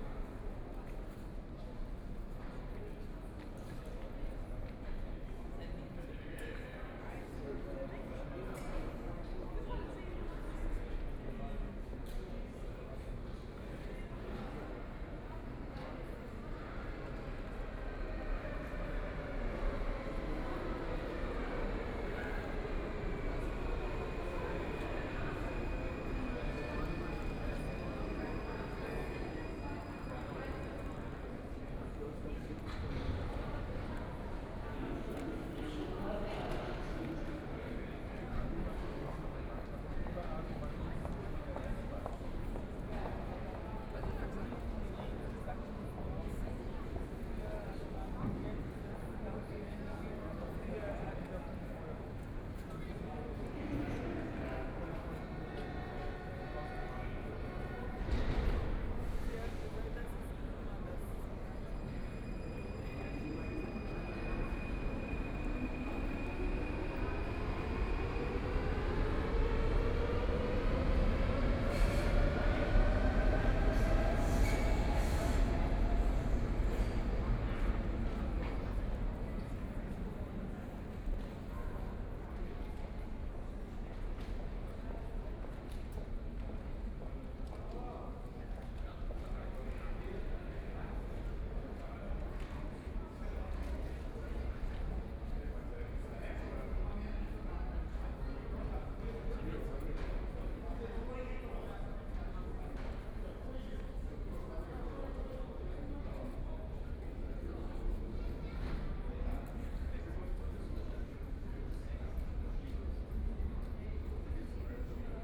Line U5, from Hauptbahnhof station to Theresienwiese station